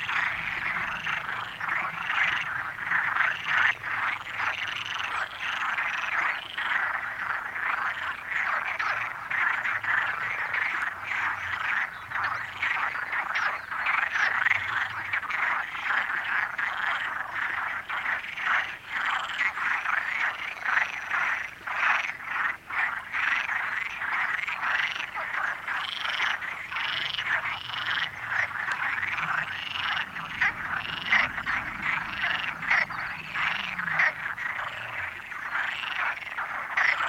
Portugal - Frogs at the lake of the Mistérios Negros Trailhead, Biscoitos
Frogs chatting in the lake at the start of the Mistérios Negros walk near Biscoitos.
Recorded on an H2n XY mics.